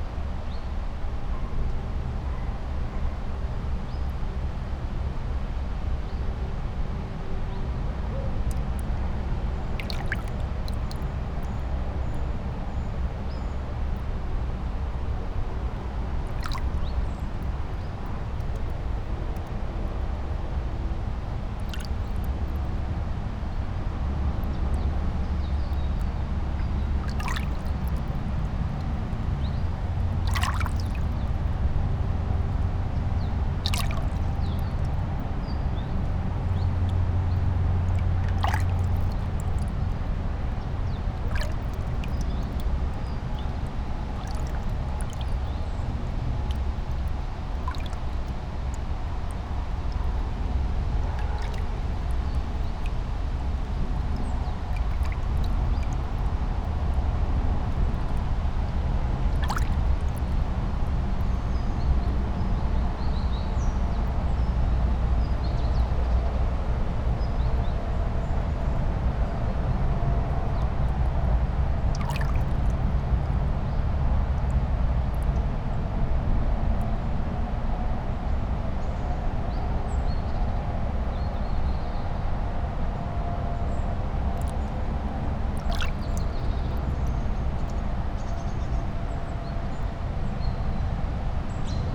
brittle pier, Melje, river Drava areas, Maribor - still water
feet, trying to stay in deeply cold water, strong traffic noise all around, birds, kingfisher among others, southwesterly winds through tree crowns
Maribor, Slovenia